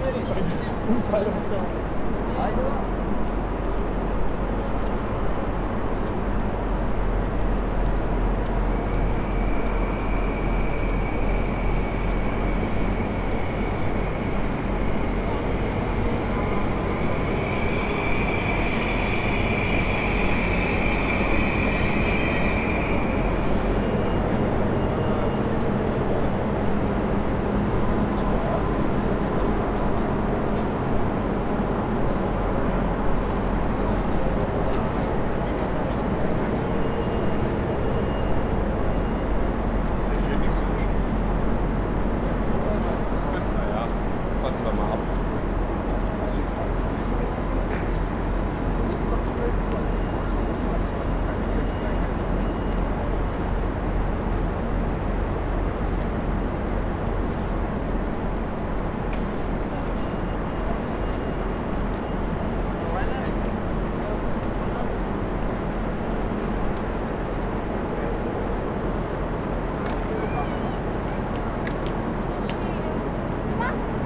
Am Hauptbahnhof Ebene A // gegenüber Gleis, Frankfurt am Main, Deutschland - 24. April 2020 Gleiszugang
Starts with the escalator, but the one that leads directly into the platforms. Shortly after arriving there someone asks for money. This is one of the big differences to the time before Corona: the beggars are more bluntly asking for money. They were there before, but since there are less people and people are giving less money (like me), they have to ask more. In a recording I did.a little bit later at the trainstation of the airport a man complains that the situation has become more difficult...
There is an anouncement with a sound I never heard before, the voice asking people not to stay on the platform (as far as I understand).
Hessen, Deutschland, 24 April, ~15:00